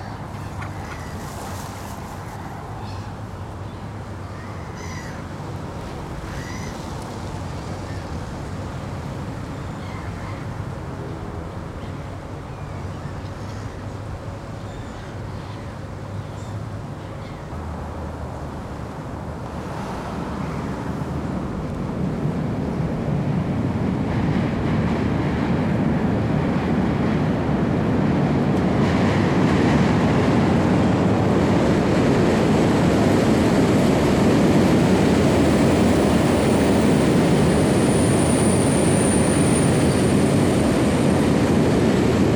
Railbridge, Vyton, Prague, Field recording